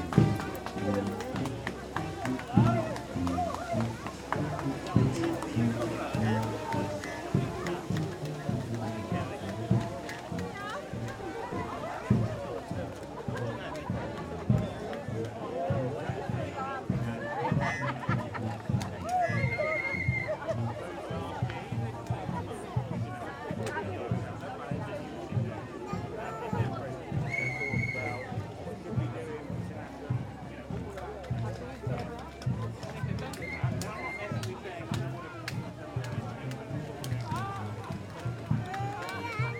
tolpuddle festival, pva mediaLab
soundscape, processions, field recording